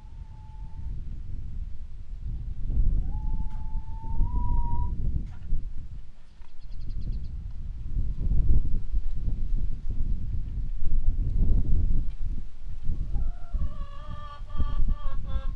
Corniglia, outskirts - waiting to go outside
early, sunny, windy morning in the outskirts of Corniglia. not to much energy is being applied at this time of a weekday. a flock of hens waiting to be released from a shed.
6 September, 07:36